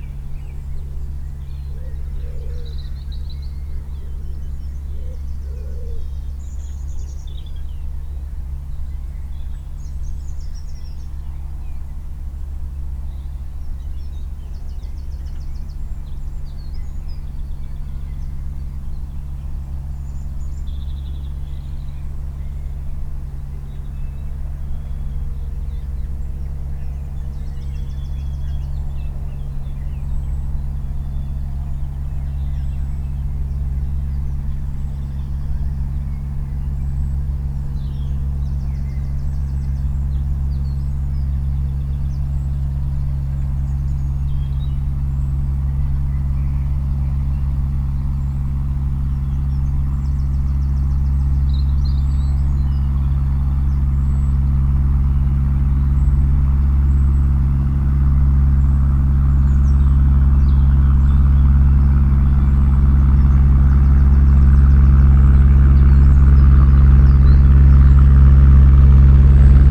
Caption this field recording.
A Narrowboat passes a small beach uncovered by the river. The boat's wash laps the sand then rebounds from the opposite bank. At the end two geese fly overhead together. The mics and recorder are in a rucksack suspended from an umbrella stuck in the sand. MixPre 3 with 2 x Beyer Lavaliers.